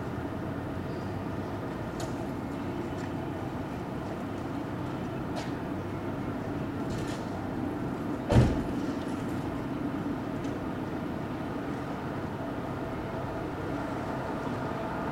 Midday Bells - Earl Street South, Dublin
Church Bells, Traffic, Seagulls, Sirens, Street, Wind.
Dublin, Ireland, 18 July 2011